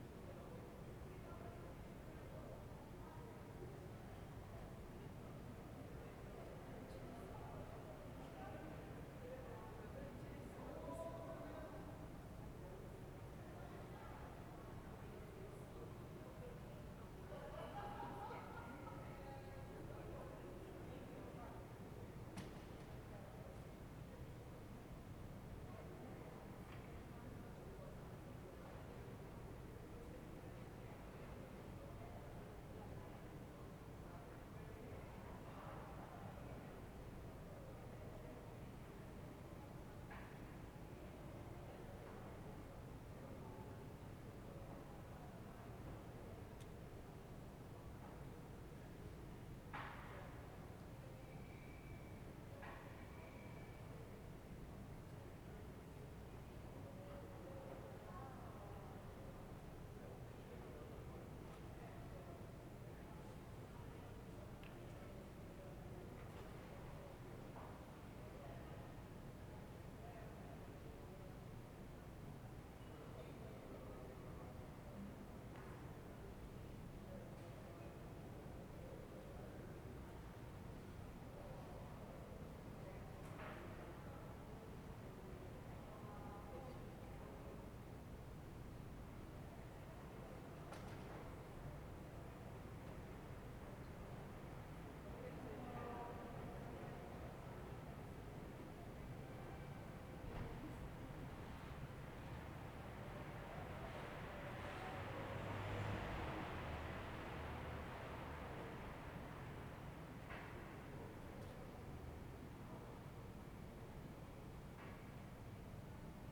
Ascolto il tuo cuore, città. I listen to your heart, city. Several chapters **SCROLL DOWN FOR ALL RECORDINGS** - Night with Brian Eno video in background in the time of COVID19 Soundscape
"Night with Brian Eno video in background in the time of COVID19" Soundscape
Chapter CXL of Ascolto il tuo cuore, città. I listen to your heart, city
Wednesday November 11th 2020. Fixed position on an internal terrace at San Salvario district Turin, fifth day of new restrictive disposition due to the epidemic of COVID19.
On the terrace I was screening video “Brian Eno - Mistaken Memories Of Mediaeval Manhattan”
Start at 10:41 p.m. end at 11:06 p.m. duration of recording 25’05”